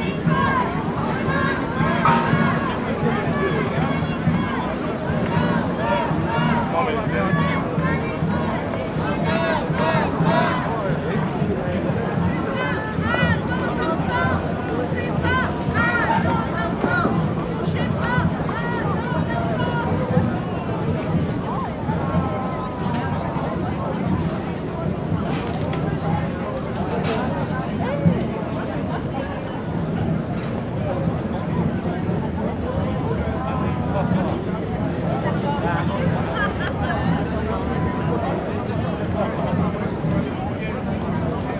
Jean Jaurès, All. du Président Franklin Roosevelt, Toulouse, France - covid 19
anti health pass event Toulouse Center
covid 19